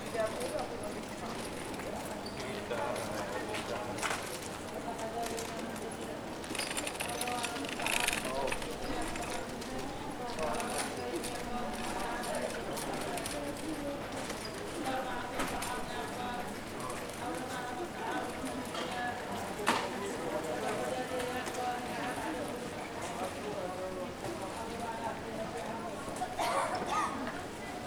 Rue Jules Joffrin, Saint-Denis, France - Side of Covered Market, Saint Denis
This recording is one of a series of recording mapping the changing soundscape of Saint-Denis (Recorded with the internal microphones of a Tascam DR-40).
27 May, 11:00